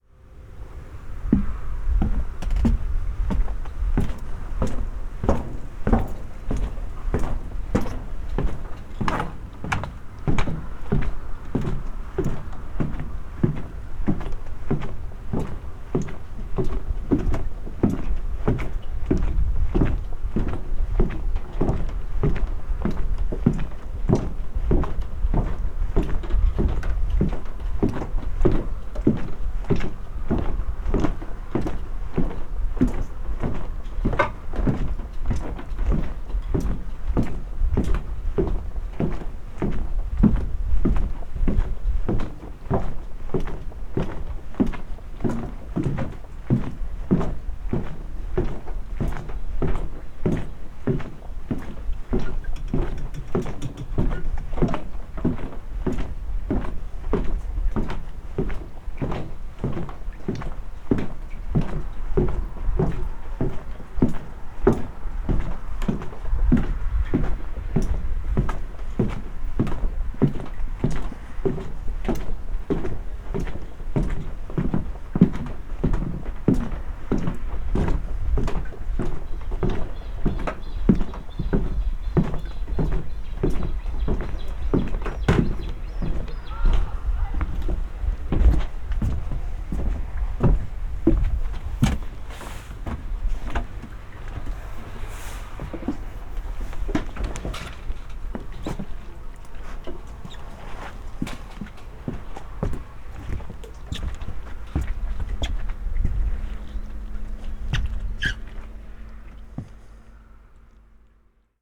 workum, het zool: marina, berth h - the city, the country & me: marina berth
short soundwalk over marina berth
the city, the country & me: august 2, 2012
Workum, The Netherlands